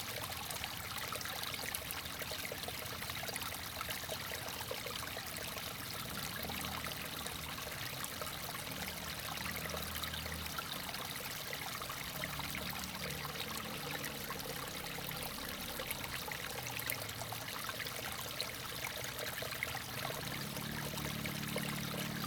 Paper Dome, 桃米里 Nantou County - Flow sound
sound of the Flow
Zoom H2n MS+XY
24 March 2016, 07:01